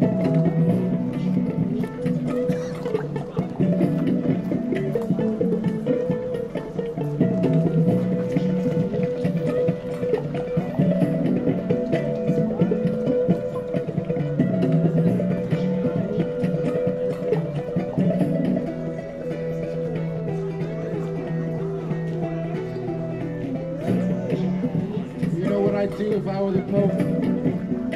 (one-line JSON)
{"title": "Görlitzer Park", "date": "2011-09-26 19:10:00", "description": "Great Artist in Gorlitzer Park, playinig a Bouzuki, Kalyuka, Jaw Harp, Microphone and Loop Station. Joining Mr. US aKa Mr. Youth. \"What would you do if you were the Pope?\"", "latitude": "52.50", "longitude": "13.43", "altitude": "36", "timezone": "Europe/Berlin"}